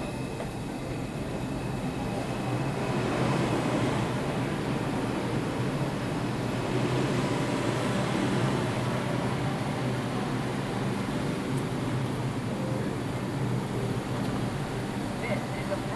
Midtown East, New York City, New York, USA - NYC, metro station
NYC, metro station at grand central station; platform, train coming n going, passengers waiting, music;